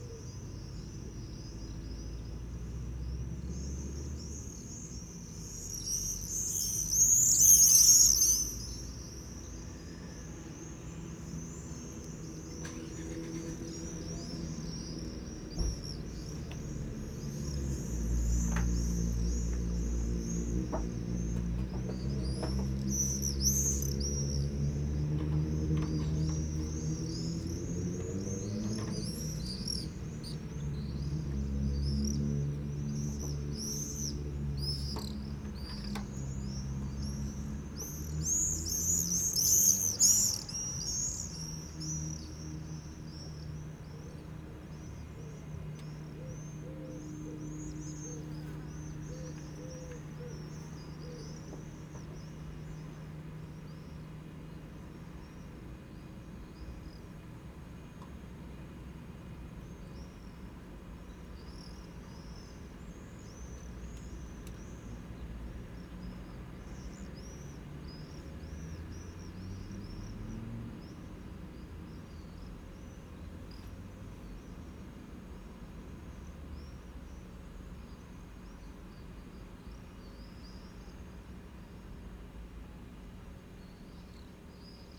Halesworth market town; sounds of summer through the attic skylight - Swifts race and scream across the roof tops - real fun
It is a hot blue sunny Sunday 27 degC. People relax in the heat, taking it easy in their gardens. Traffic is light. I am in the attic in a creaky chair. The skylight is wide open, grateful for the small breeze, as gangs of young swifts rocket past, super fast, very close, screaming across the tiles, through gaps between houses and then high into the air, wind rushing though their wing feathers. Am envious, it looks and sounds fantastic fun. Later a goldfinch sings a little from the old TV aerial and distant pigeons coo.